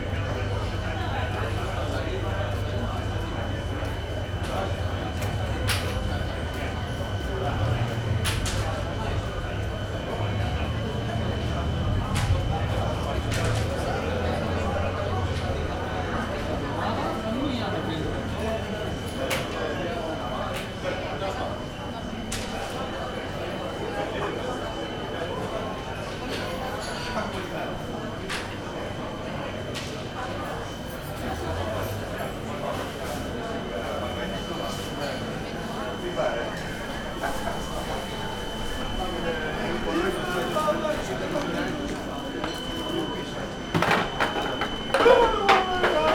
Heraklion, downtown, back street - street off the main tourist track
a narrow, back street with small cafes, locals sitting at tables, talking and playing backgammon. recorded close to a broken, noisy intercom.
Heraklion, Greece, 28 September 2012